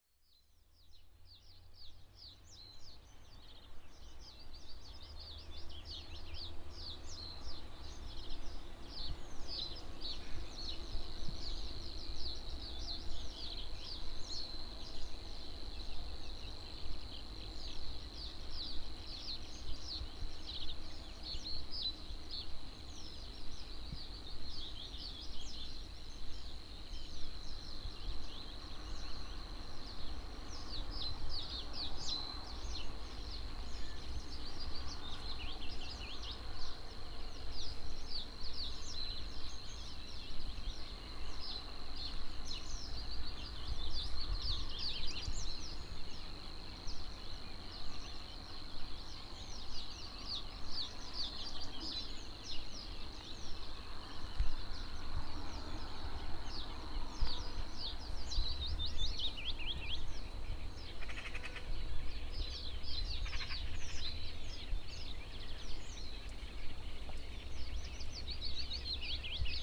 Srem, Puchalski's urban park near the hospital, swamps - swamps in the summer morning
recorder early morning, birds and insects were very active, but h4n mics didn't get the detalis as well all the stereo image. as if the air was trembling from all the noise. unfortunately inevitable car sounds in the background
4 July 2010, ~04:00